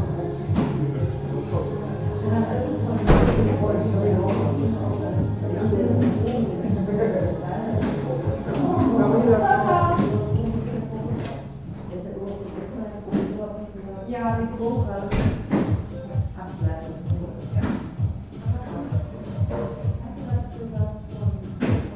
gelsenkirchen-horst, markenstrasse - zum engel